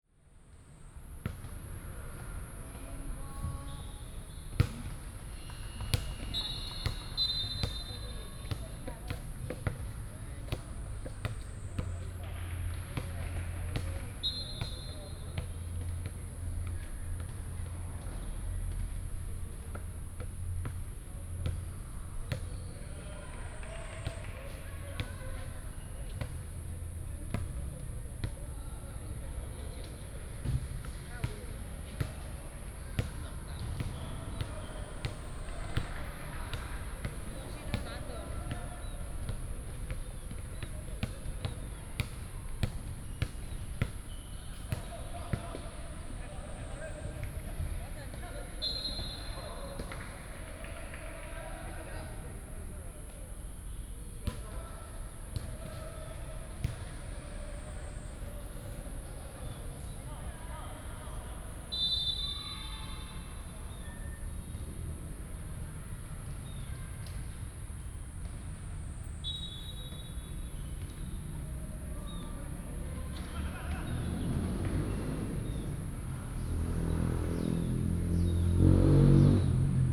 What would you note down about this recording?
bus station, In the station hall